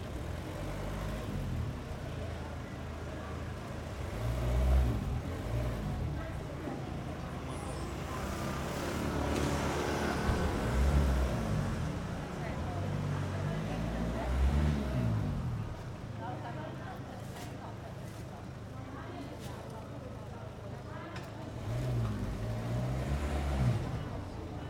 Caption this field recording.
Occasional tourists passing by. A car driver is trying several times to get into the small parking space. The owners of the small shops across the street are talking to each other. Recorded in Mid/Side Technique . With NTG3 as mid and AKG CK94 as figure 8 microphones. The Mics where placed in a Rode Blimp and handheld.